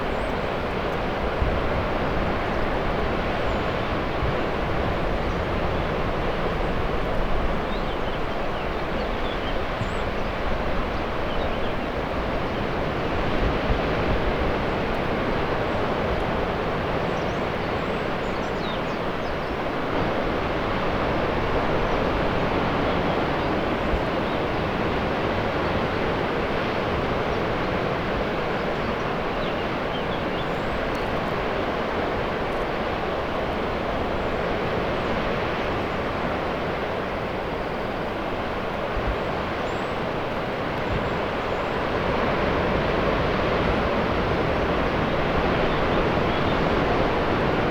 east from Porto da Cruz - ocean
intense swoosh of ocean waves several dozen meters down the cliff.